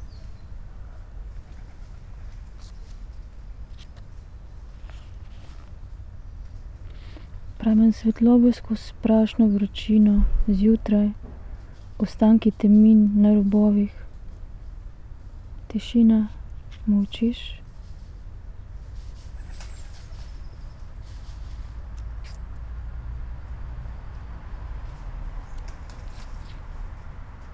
poems garden, Via Pasquale Besenghi, Trieste, Italy - reading poem